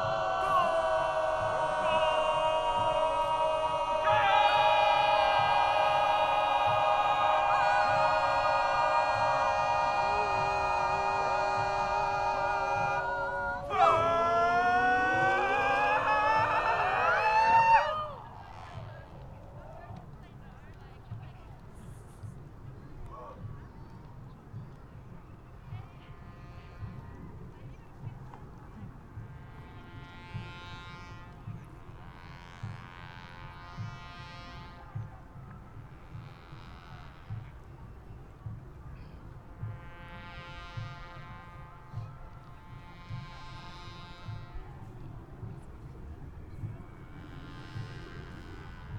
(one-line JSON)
{"title": "Tempelhofer Feld, Berlin, Deutschland - Tempeltofu excerpt #2", "date": "2012-08-18 15:40:00", "description": "Tempeltofu, by Tomomi Adachi, composition for voices, vuvuzelas, bicycles and trombones.", "latitude": "52.48", "longitude": "13.41", "altitude": "44", "timezone": "Europe/Berlin"}